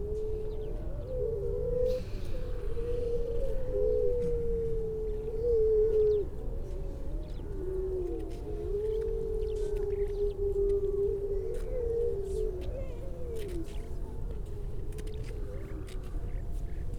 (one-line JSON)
{"title": "Unnamed Road, Louth, UK - grey seals soundscape ...", "date": "2019-12-03 10:08:00", "description": "grey seals soundscape ... generally females and pups ... bird calls ... pied wagtail ... starling ... chaffinch ... pipit ... robin ... redshank ... crow ... skylark ... curlew ... all sorts of background noise ...", "latitude": "53.48", "longitude": "0.15", "altitude": "1", "timezone": "Europe/London"}